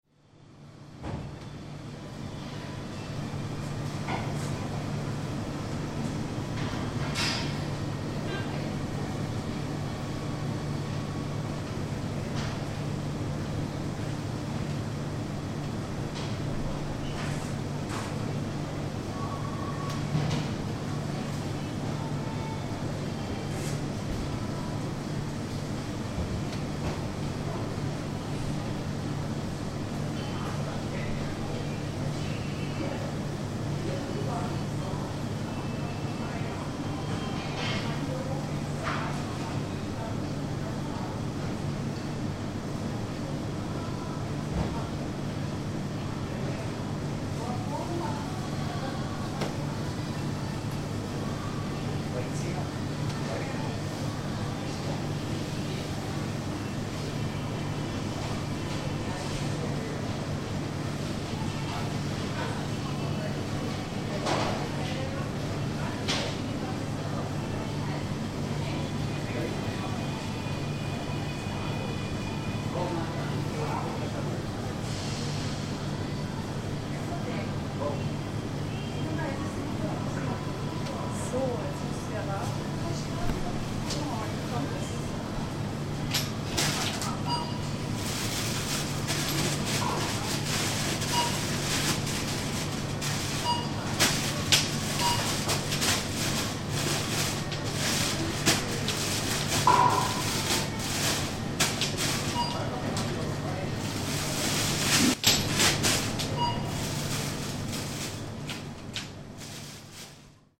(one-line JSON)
{"title": "berlin, hermannplatz: warenhaus, tiefkühlabteilung - the city, the country & me: frozen-food department at karstadt department store", "date": "2008-06-15 00:08:00", "description": "the city, the country & me: june 2, 2008", "latitude": "52.49", "longitude": "13.42", "altitude": "43", "timezone": "Europe/Berlin"}